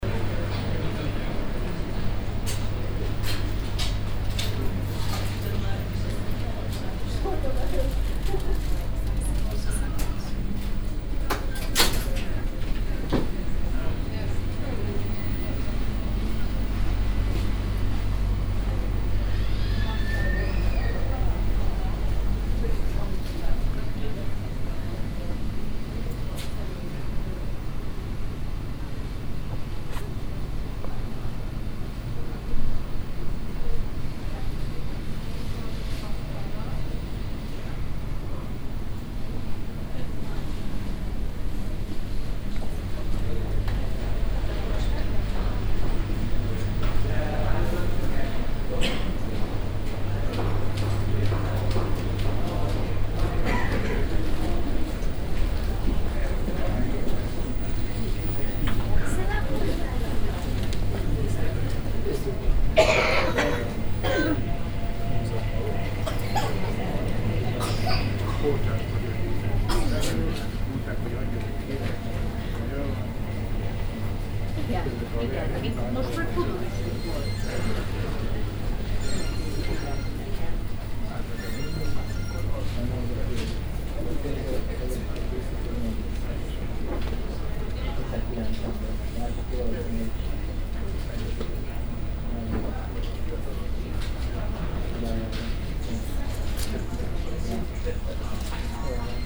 at the airport, terminal 1 in the shopping zone of the deaprture area
international city scapes and social ambiences